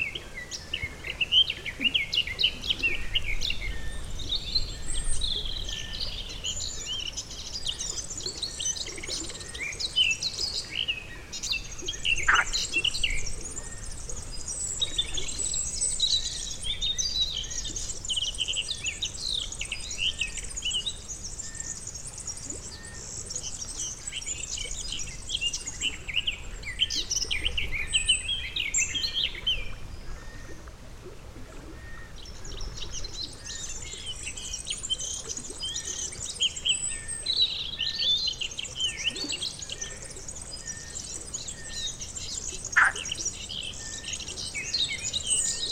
La Fuentona, Soria, Spain - Paisagem sonora de La Fuentona - La Fuentona Soundscape
Paisagem sonora de La Fuentona em Soria, Espanha. Mapa Sonoro do Rio Douro. Soundscape of La Fuentona in Soria, Spain. Douro river Sound Map.